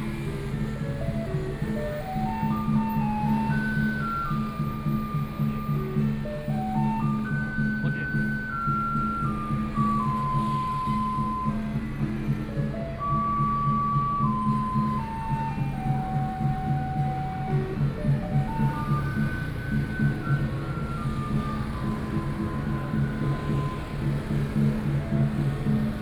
Guanghua Rd., New Taipei City - Traditional temple festivals
At the junction, Traditional temple Carnival, Garbage trucks will be arriving music, Traffic Noise, Binaural recordings, Sony PCM D50 + Soundman OKM II
New Taipei City, Taiwan